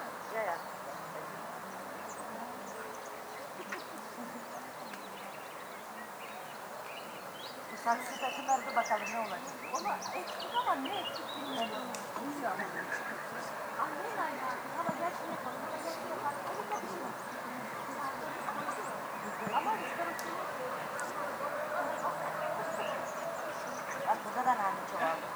kleingartenanlage, fußballplatz, leute, vögel